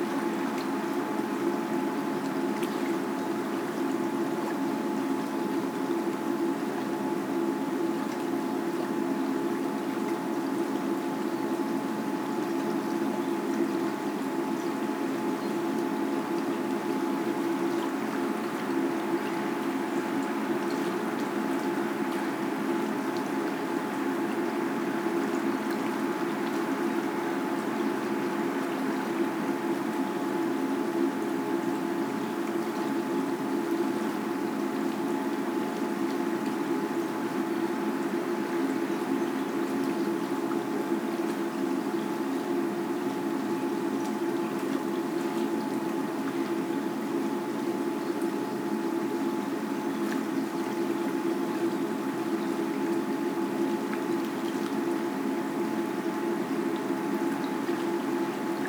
Ein leichtes leises Brummen - heima®t geislingen rohrach ende des wegs
Schon oft hier gestanden, am Ende des Wegs, denn die eigentliche Weiterführung ist einfach nicht mehr existent. Dafür wird man hier immer mit einem leichten leisen Brummen belohnt, welches der Teil der Rohrach hervorruft, welcher sich unter der Mühle hindurch schlängelt.
Ein kleiner Beitrag zum World Listening Day 2014 #WLD2014 #heima®t
heima®t - eine klangreise durch das stauferland, helfensteiner land und die region alb-donau